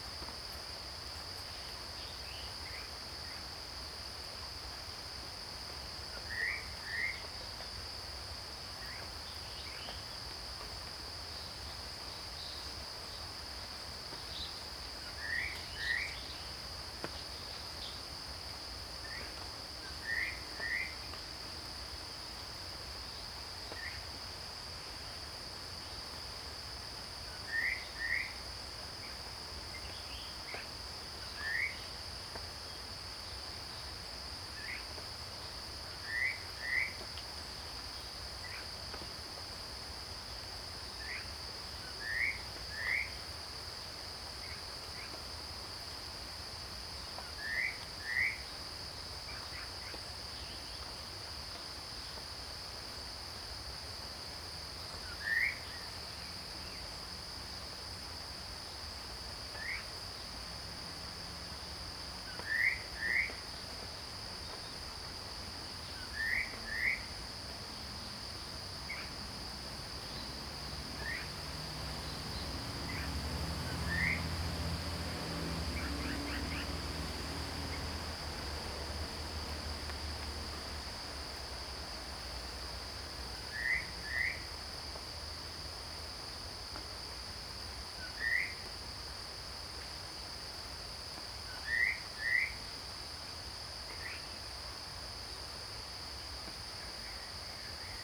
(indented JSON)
{
  "title": "Zhong Lu Keng Wetlands, Puli Township, Nantou County - Bird calls",
  "date": "2015-08-26 08:03:00",
  "description": "Cicada sounds, Bird calls\nZoom H2n MS+XY",
  "latitude": "23.94",
  "longitude": "120.92",
  "altitude": "503",
  "timezone": "Asia/Taipei"
}